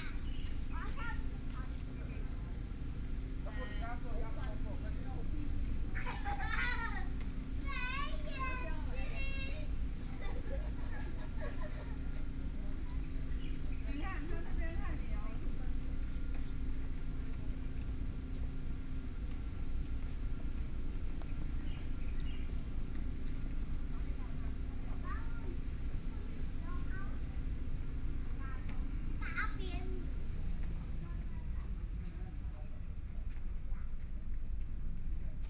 鹽埕區新化里, Kaoshiung City - Sitting on the roadside
Sitting on the roadside, Traffic Sound, Tourist, Birdsong, Bicycle Sound
Binaural recordings, Sony PCM D50 + Soundman OKM II
Kaohsiung City, Taiwan, 21 May 2014, ~18:00